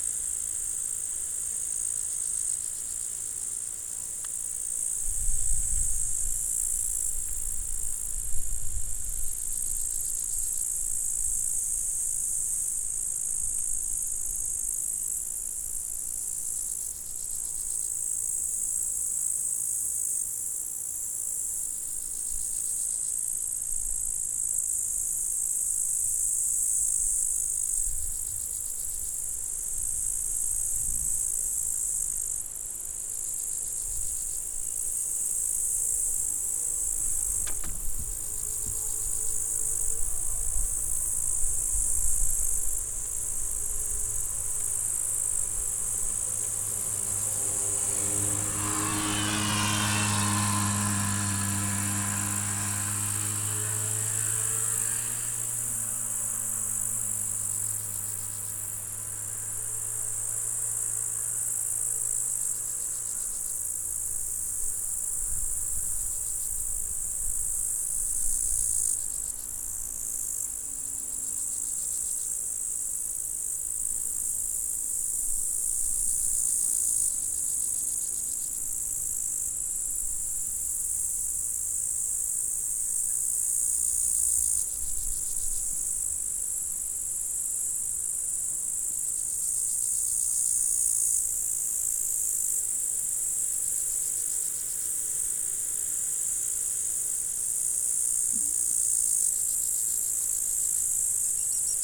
Au bord de la route du col du Sapenay une prairie sèche très diversifiée entourée de forêt, combinaisons rythmiques favorables à l'apaisement . Passage d'une voiture en descente et d'un scooter en montée.
Auvergne-Rhône-Alpes, France métropolitaine, France, 10 July 2022